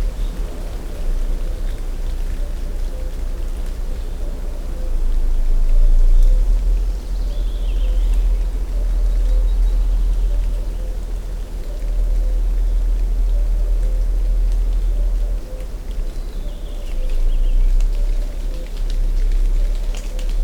Blednik, Sasino, Polska - forest ambience
forest ambience. a heavy truck rumble nearby (mainly between 0:30 - 1:30). water drops swept from trees onto ground. cuckoo singing. (roland r-07)